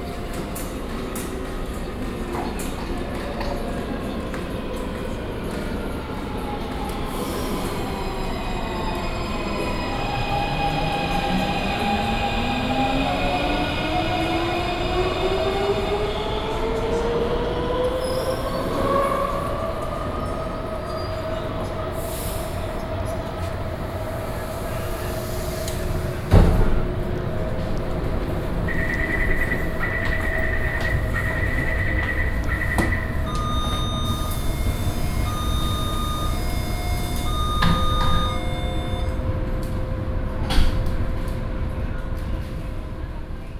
板橋站, New Taipei City, Taiwan - MRT station platform
MRT station platform
Sony PCM D50+ Soundman OKM II
Banqiao District, New Taipei City, Taiwan, 20 June 2012, ~13:00